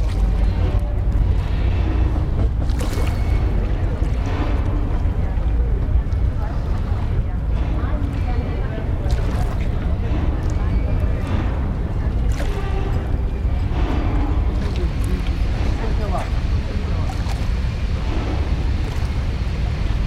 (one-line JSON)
{"title": "São Paulo, Portugal - Rio Tejo waterfront, Lisboa", "date": "2008-04-15 11:17:00", "description": "Rio Tejo waterfront, Lisboa. [I used an MD recorder with binaural microphones Soundman OKM II AVPOP A3]", "latitude": "38.71", "longitude": "-9.15", "altitude": "5", "timezone": "Europe/Lisbon"}